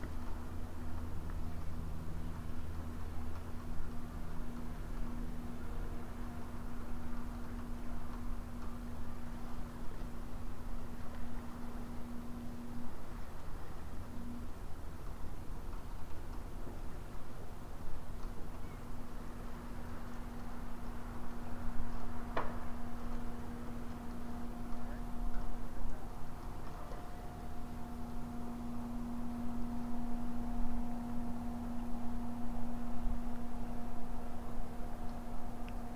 closer of Coast Guardian and airport for seaplane/ raining and desert day/
Recording with love